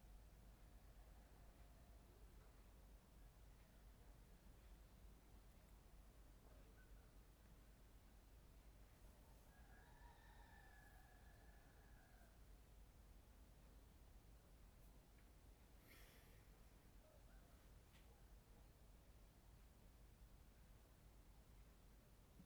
On the second floor, Chicken sounds, Zoom H6 M/S
雲林縣(Yunlin County), 中華民國, 1 February, 04:15